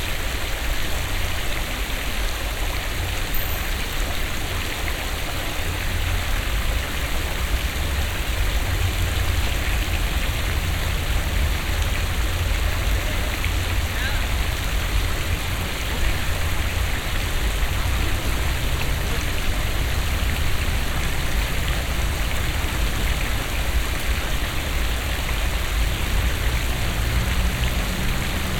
cologne, mediapark, brunnenanlage
hässliche verkommene platten-beton-stahl brunnenanlage als vermeintliche architektonische zierde des überdimensionierten platzes, plätschern im wind
soundmap nrw:
projekt :resonanzen - social ambiences/ listen to the people - in & outdoor nearfield recordings